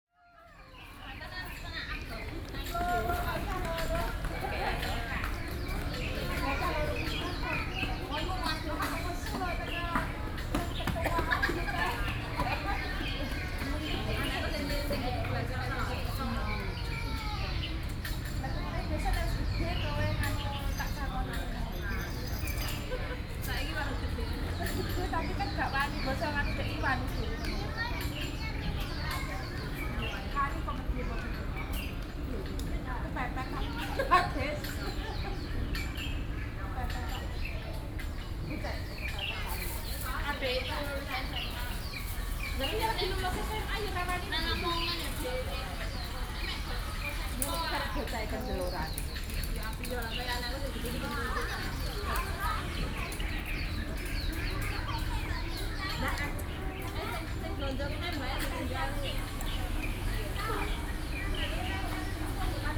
Beitou, Taipei - People in the park
People from different countries chatting, Sony PCM D50 + Soundman OKM II